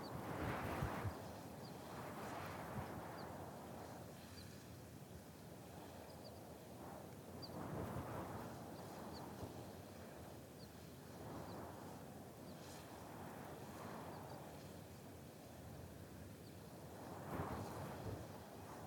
near Windhouse, Yell, Shetland Islands, UK - Sheep grazing right beside an inlet
A lovely situation which I had passed in the car the day before; sheep grazing right beside the water, actually going right down to the water to eat the seaweed in some places. These sheep - like all prey, I guess - ran away from me as soon as I approached them, so I set my FOSTEX FR-2LE and Naiant X-X microphones down in the grass near a bit of bank covered in bits of wool (I think the sheep scratch against the earth there) and went away onto the other side of the bank, so as to hopefully encourage the sheep to approach my recorder, and remove my own threatening presence from their grazing area. You can faintly hear the sheep passing through the grass, and baa-ing to each other, you can also hear the wind, and some birds quite distantly. It's very windy in Shetland, especially in an exposed spot like this.
3 August 2013